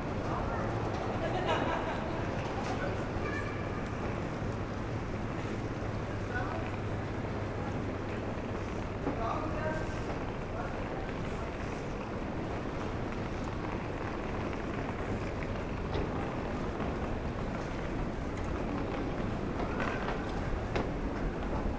Walking into the main Copenhagen station. Some trains are leaving. The station is globally quiet as a large part of commuters use bike into the city.